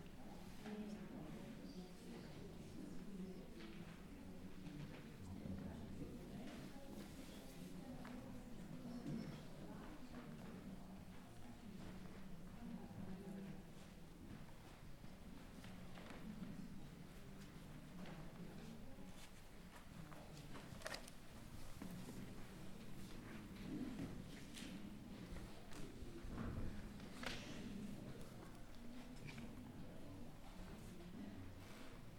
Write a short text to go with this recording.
[Zoom H4n Pro] Berlinde De Bruyckere exposition in the cellar of Hof van Busleyden museum.